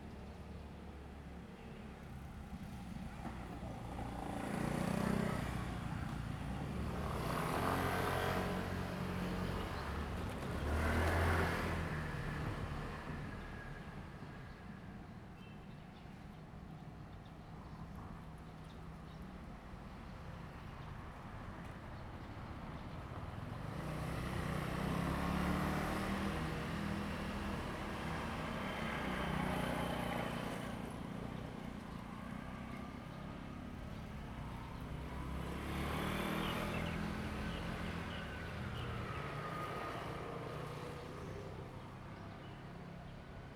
in the railroad crossing, Bird call, Traffic sound, The train passes by
Zoom H2n MS+ XY